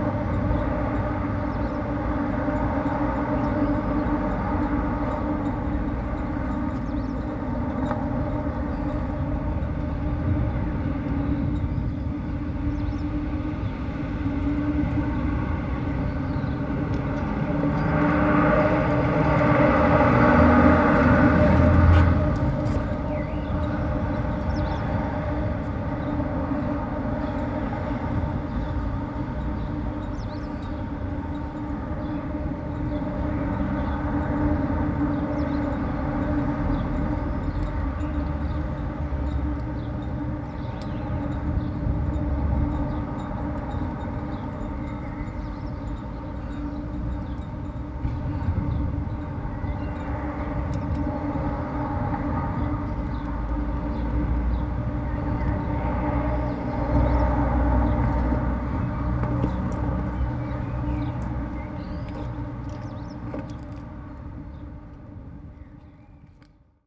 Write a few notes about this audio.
recording inside a pipe on a service hatch cover ot the base of one of the stadium's giant lighting poles.